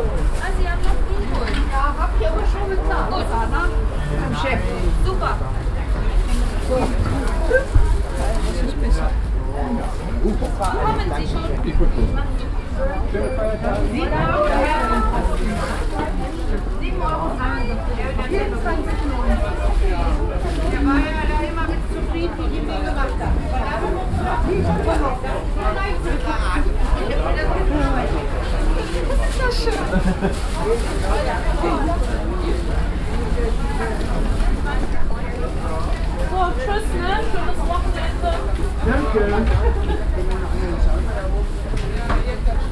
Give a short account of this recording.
… I’m strolling one of the last Saturday markets in town before Christmas… mingeling closely along the stalls… it’s a strong, icy wind around… …ein Marketbummel über den letzten Samstag's Wochenmarkt vor Weihnachten… mische mich unter die Leute an den Ständen… es geht ein eisiger, starker Wind über den Platz…